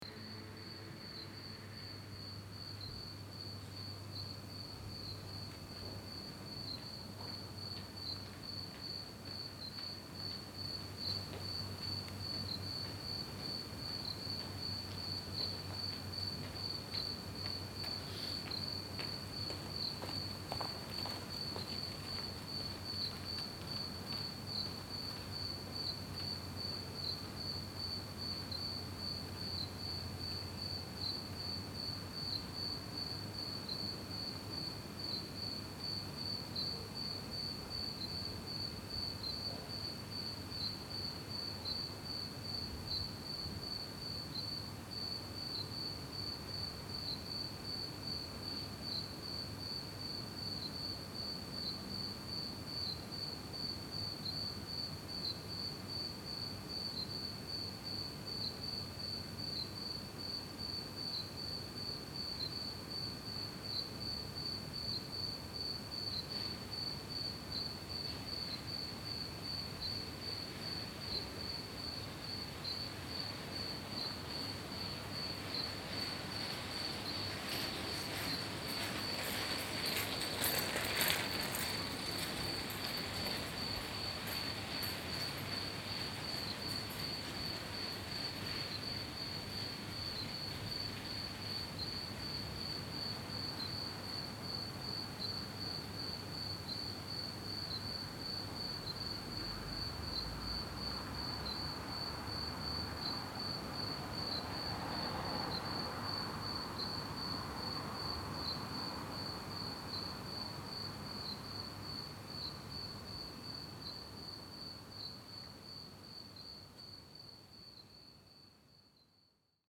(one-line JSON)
{"title": "Sevilla, Provinz Sevilla, Spanien - Sevilla - Calle Relator, cicades in the morning", "date": "2016-10-08 07:30:00", "description": "In the narrow old streets of Sevilla in the morning. The sound of cicades coming from one balcony - passengers and a bicycle.\ninternational city sounds - topographic field recordings and social ambiences", "latitude": "37.40", "longitude": "-5.99", "altitude": "13", "timezone": "Europe/Madrid"}